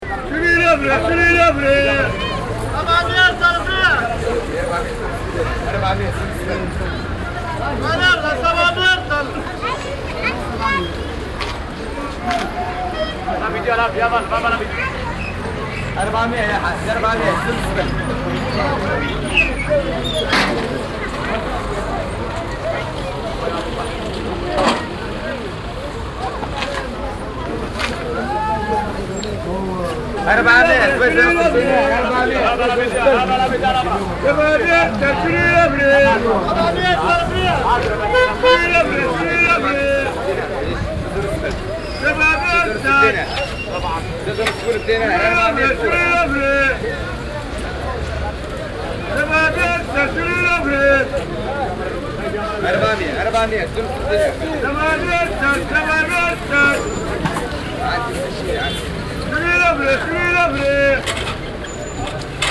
Bab El Jazira, Tunis, Tunesien - tunis, friday market at mosquee sidi el bechir
At the crowded open air friday street market. The sound of a strawberry fruit trader calling out. Overall the sound of people walking around, talking and shopping plus the traffic noise.
international city scapes - social ambiences and topographic field recordings
Tunis, Tunisia, 5 May